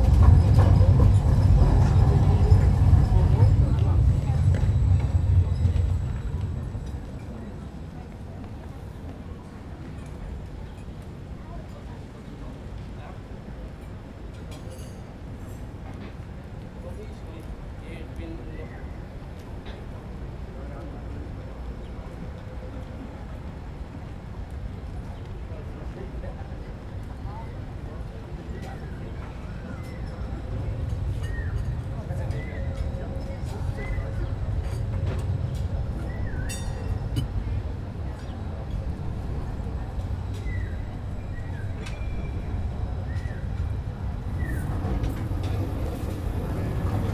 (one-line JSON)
{"title": "Bahnhofplatz, Kaffee mit Aussicht auf Trams und Menschen", "date": "2011-07-08 13:10:00", "description": "französisch angehauchtes Kaffee mit Aussicht auf Touristen und ein- und ausströmenden Menschen Bahnhof Basel", "latitude": "47.55", "longitude": "7.59", "altitude": "279", "timezone": "Europe/Zurich"}